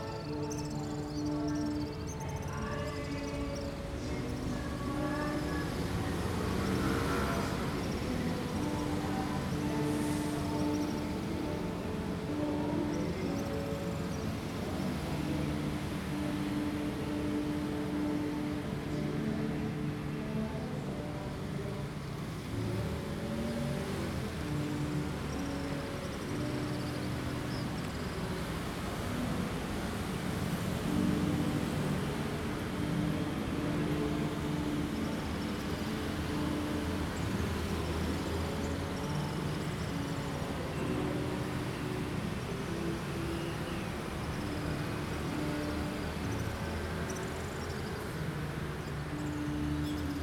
Tainan Fire Department 台南市消防局 - Swallows singing 燕子鳴叫聲
In the afternoon, swallows are singing around the Tainan Fire Department.
Tainan City, Taiwan